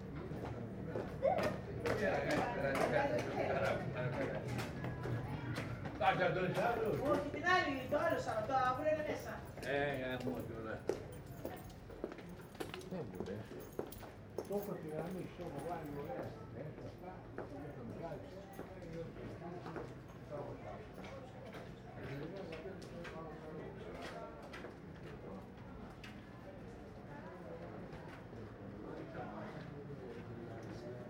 Fourni, Griechenland - Seitengasse
Am Abend in einer Seitengasse. Die Insel ist Autofrei.
Mai 2003